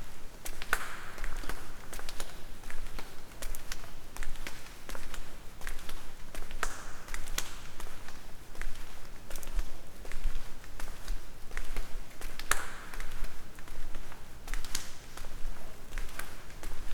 Church of the Virgin of Mercy, Ptujska Gora, Slovenia - walking, water drops